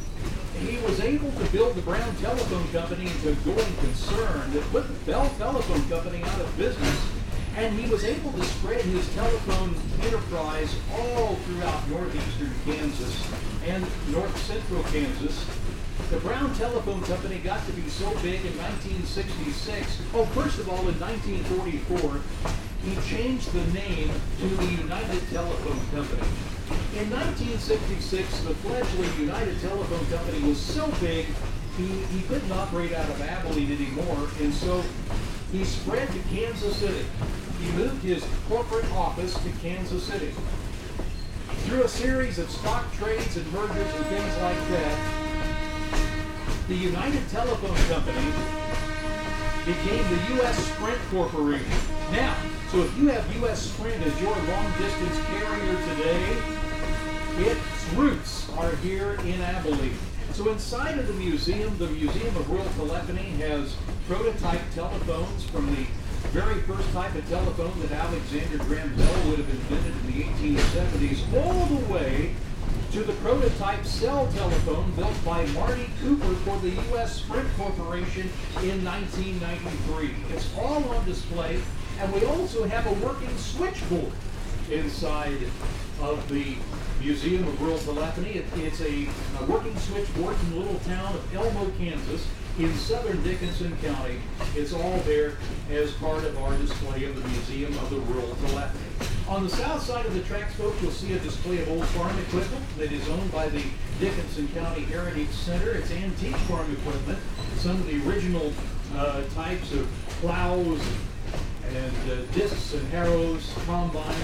Grant Township, Dickinson County, near 2200 Avenue, Abilene, KS, USA - Abilene & Smoky Valley Railroad (Return Trip)
Heading west, returning to the depot in Abilene, after a trip to Enterprise. Riding on an excursion train: inside a 1902 wooden KATY (Missouri-Kansas-Texas Railroad) passenger car, pulled by a 1945 ALCO S-1 diesel engine (former Hutchinson & Northern RR). Host Steve Smethers provides local history. Right mic placed near open window. Stereo mics (Audiotalaia-Primo ECM 172), recorded via Olympus LS-10.